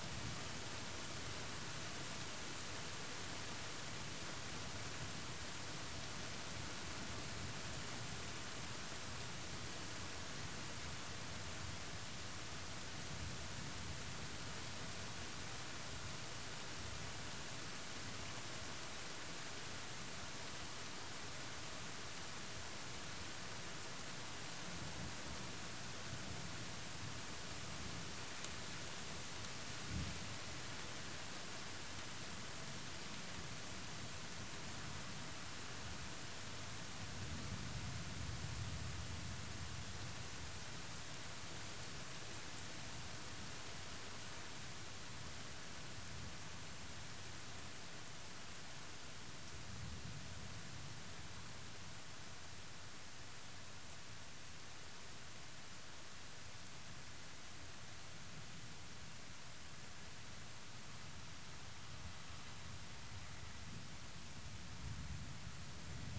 Coryluslaan, Heerhugowaard, Nederland - Rain, thunder
Recorder : Sony PCM-D100
Microphones : Clippy EM172
Best sound : Use a headphone for most realistic sound.
Made the recording out of window second floor, about 8 meter from the pavement. Outside in garden of neighbours was a party tent with an plastic material roof. Further on is the road about 30 meters after the house. I placed the tiny clippy EM172 stereo microphone on a distance of 40 centimeter apart, placed on a wardrobe hanger just outside the window. All start quiet but in the procress you can hear the rain, the sound of rain on the plastic roof of partytent, car passing by on wet pavement and of course the incoming thunder. Max recording level was -6Db.
Heerhugowaard, Netherlands, 4 June 2019